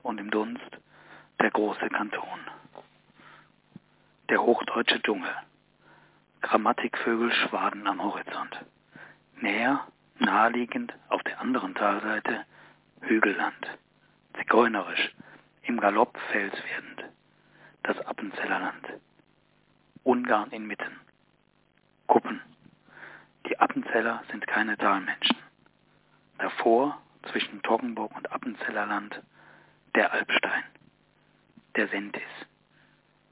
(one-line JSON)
{"title": "Auf dem Chäserugg - Der Wettermacher, Peter Weber 1993", "latitude": "47.19", "longitude": "9.31", "altitude": "910", "timezone": "GMT+1"}